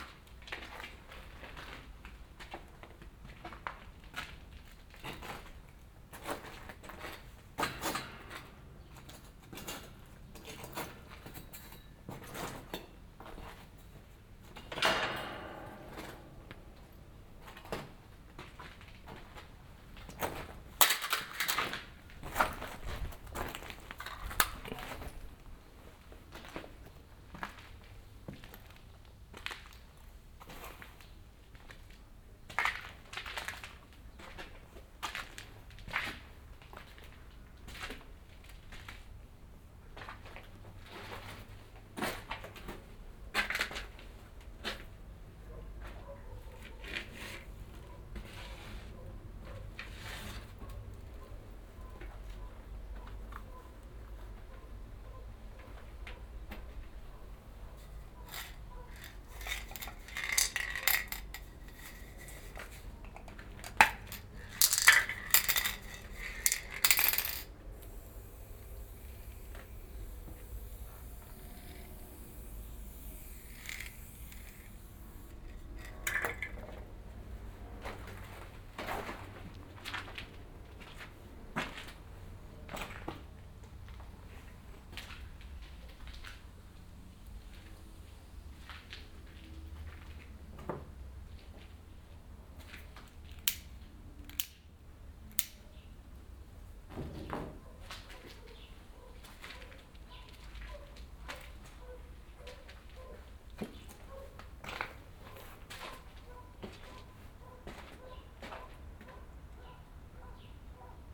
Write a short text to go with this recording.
former palm oil factory and storage, closed since decades, now beeing rebuild as luxus lofts, construction set on ground floor, lots of debris, binaural exploration.